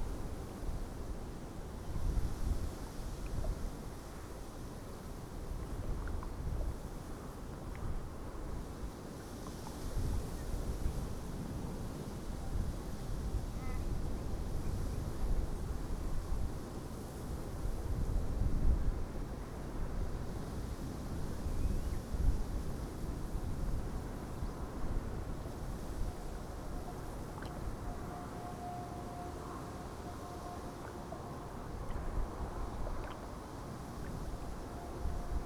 coot, traffic noise of the motorway in the background
the city, the country & me: july 7, 2001
Breezanddijk, The Netherlands